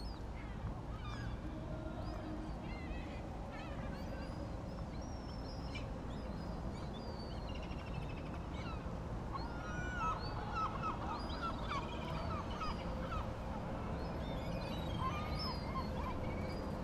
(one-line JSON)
{"title": "Helsinki, Finland - Port of Helsinki", "date": "2011-08-10 18:07:00", "latitude": "60.17", "longitude": "24.96", "altitude": "4", "timezone": "Europe/Helsinki"}